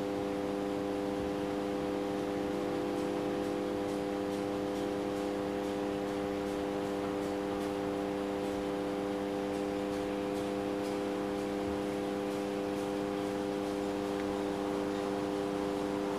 ул. Новая, Нижний Новгород, Нижегородская обл., Россия - courtryard
this sound was recorded by members of the Animation Noise Lab
courtyard of a residential building
Приволжский федеральный округ, Россия